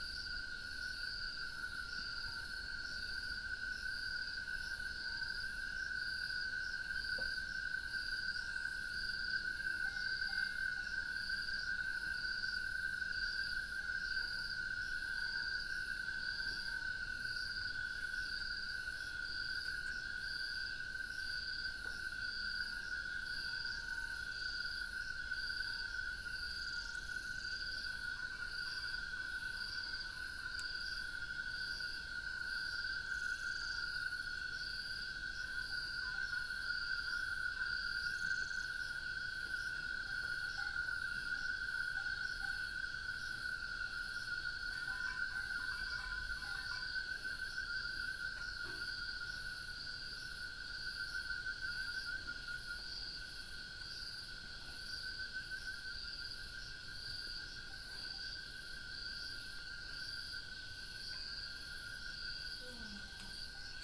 Harmony farm, Choma, Zambia - night sounds in summer
sounds at night in the summer months...